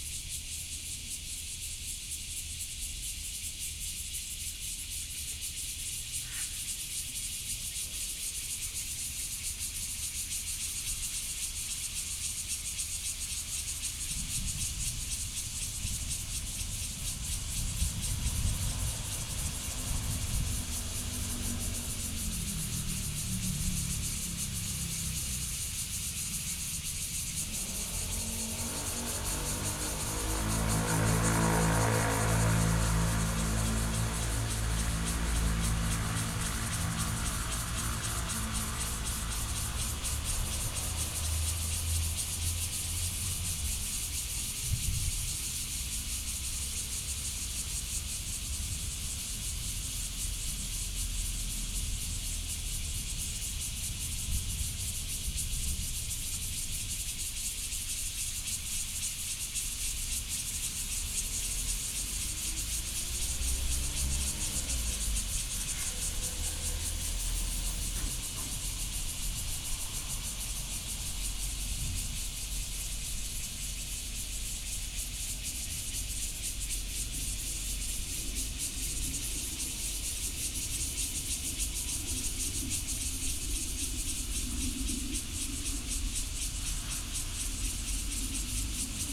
Fugang - Abandoned factory
Hot noon, Cicadas, Distant sound of thunder, The sound of the train traveling through, Sony PCM D50+ Soundman OKM II